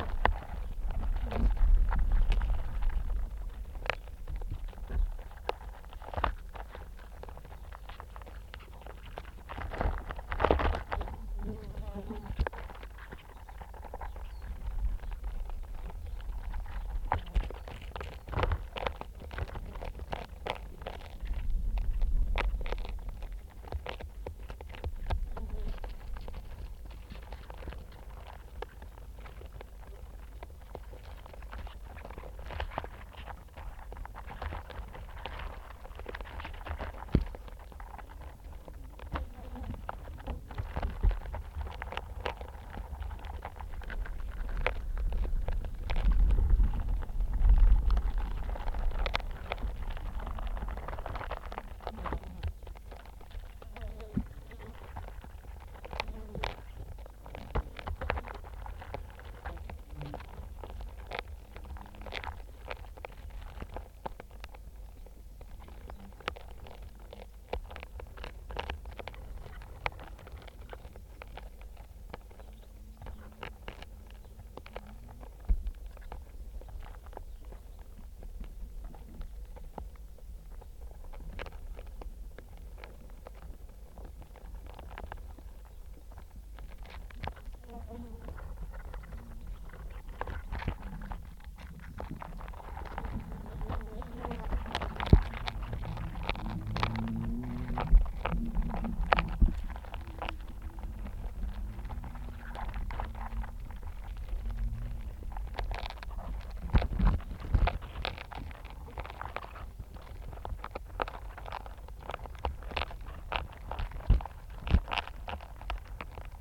Forest Garden, UK - apple orchard
wasps and flies on the fallen apples
Suffolk, England, United Kingdom, July 2022